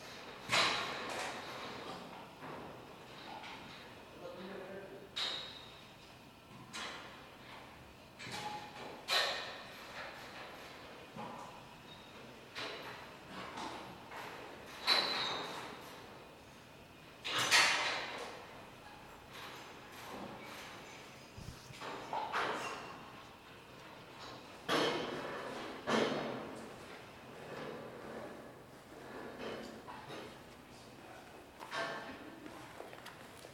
dismantling of scaffolding after renovating the Wolf-Dietrich-Mausoleum
Österreich, 14 September 2021, ~13:00